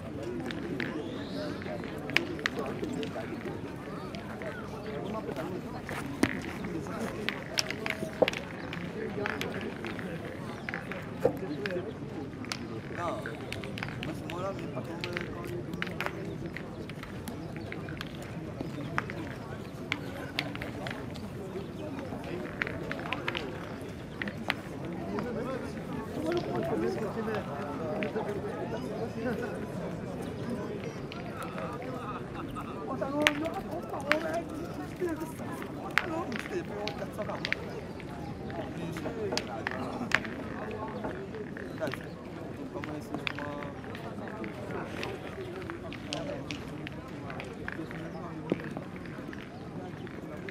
Saint denis de la reunion
Défilé 14 Juillet Terrain de pétanque Musique par intermittence-Voix joueurs-Spectateurs
24 July 2010